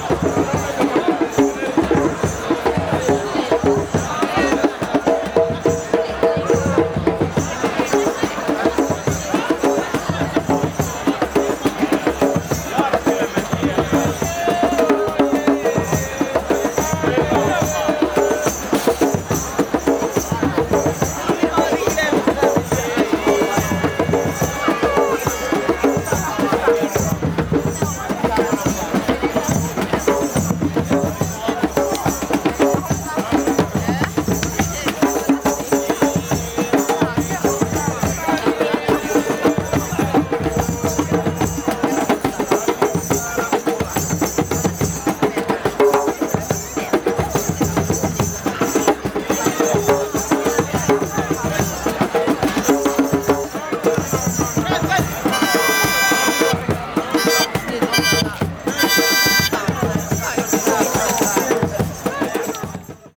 neoscenes: Vappuu drums
Vappu, the Finnish springtime coming-out party, is a sea of drunken chaos in the center of Helsinki with random musical ships drifting, full of displaced foreigners keeping the locals anchored in one reality or another.
Finland